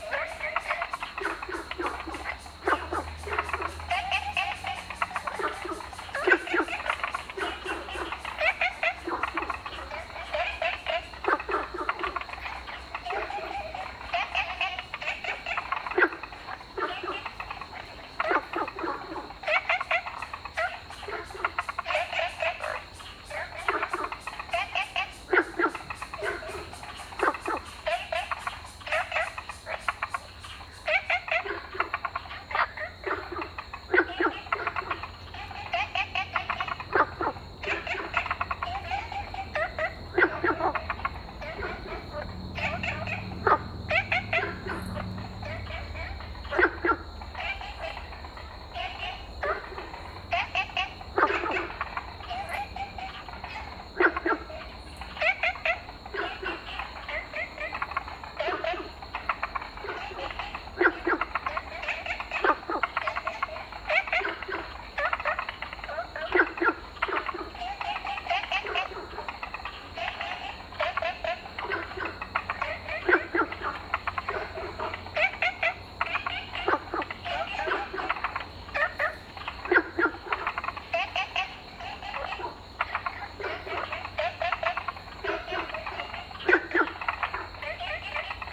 Fuyang Eco Park, Taipei City - Frog sound
In the park, Frog sound
Zoom H2n MS+XY
Taipei City, Taiwan, 5 July 2015, ~19:00